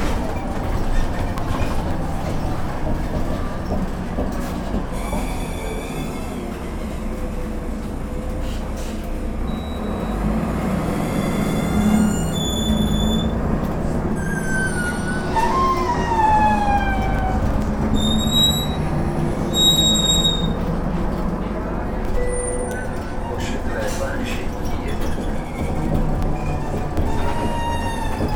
Strozynskiego, Jagielly housing estate, Poznan - squeaky bus 90
ride on a bus line 90. the bus as pretty long and had a bending part in the middle that allows it to take narrow curves. this part of the bus was very squeaky. conversations of the few commuters. usual sounds on the bus, announcer naming the stops, rumble, door beep. (roland r-07 internal mics)
Poznań, Poland, 15 September 2018